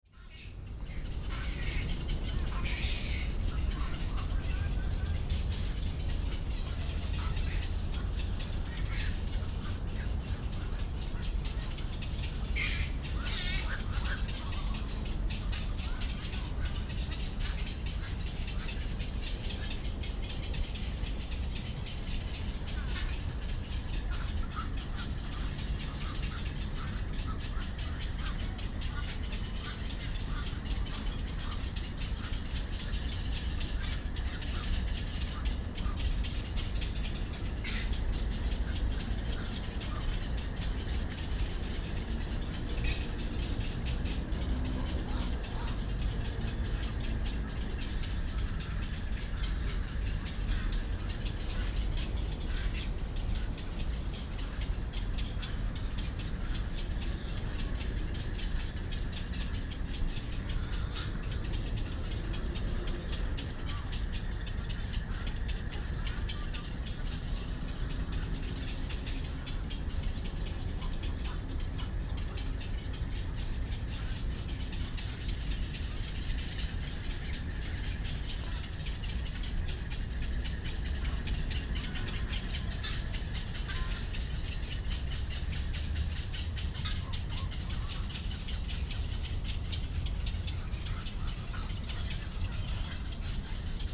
Daan Forest Park, Taipei city, Taiwan - Bird calls
Bird calls, Frogs chirping, in the park
Da’an District, Taipei City, Taiwan